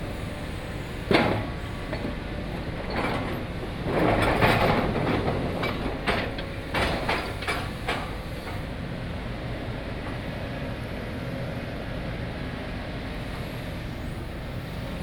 Rheinufer, Riehl, Köln - dredge at work
Köln, Rheinufer, river Rhine, dredge at work
(Sony PCM D50, OKM2)
16 September 2014, 17:50